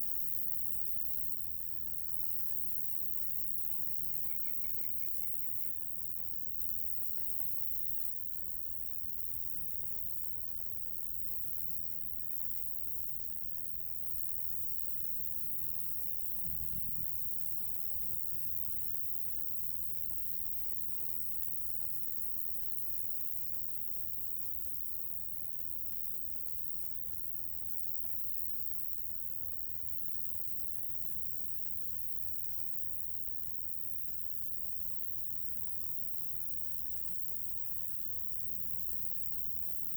Saint-Martin-de-Nigelles, France - Crickets in the grass
On a very hot summer day, crickets in the grass and small wind in the blades of grass.